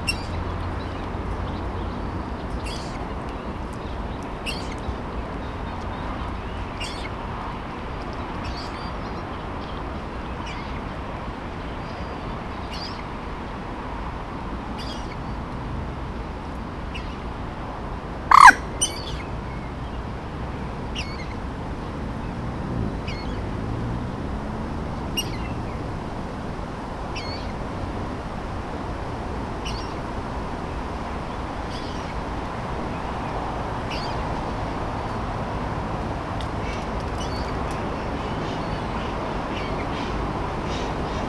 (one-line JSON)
{"title": "Dubelohstraße, Paderborn, Deutschland - Fischteiche ueber Wasser", "date": "2020-07-14 18:00:00", "description": "Mayor Franz-Georg\nwhen you imagined\nthis place\nover a hundred years ago\nas the favourite walk\nof the people\nand the adornment\nof the town\ndid you forehear\nthe noise of the cars\nand the trains\neven deep down\nin the lake?\nWhat are the swans\nthe geese and the ducks\ndreaming about?\nWhat were you doing\nup there in the elm\nand what did you hear\nwhen you fell?\nCan you hear me?", "latitude": "51.74", "longitude": "8.74", "altitude": "109", "timezone": "Europe/Berlin"}